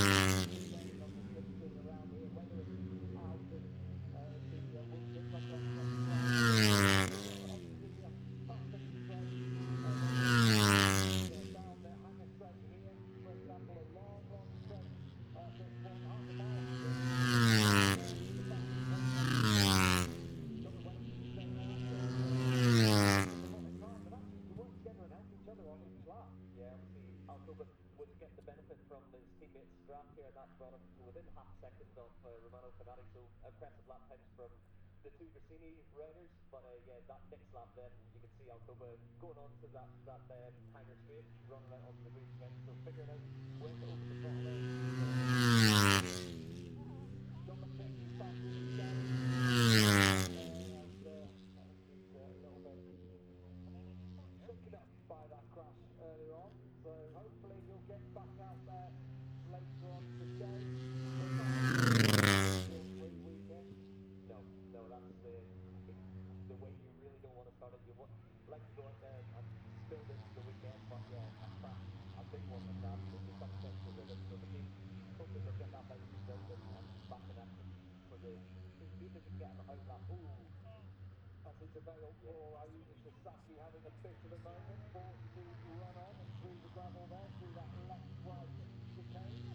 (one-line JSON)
{"title": "Silverstone Circuit, Towcester, UK - british motorcycle grand prix ... 2021", "date": "2021-08-27 09:00:00", "description": "moto three free practice one ... maggotts ... dpa 4060s to MixPre3 ...", "latitude": "52.07", "longitude": "-1.01", "altitude": "158", "timezone": "Europe/London"}